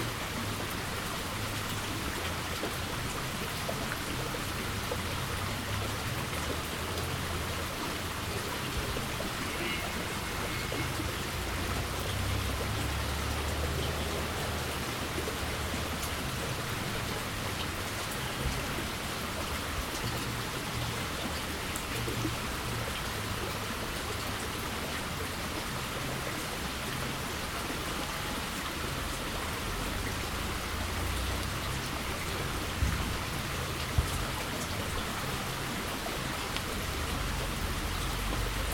Bogotá, Colombia - Colegio campestre Jaime Garzón
At a rural school farm in the company of a few sheep and a tiny brook.
For better audio quality and other soundfield recordings visit
José Manuel Páez M.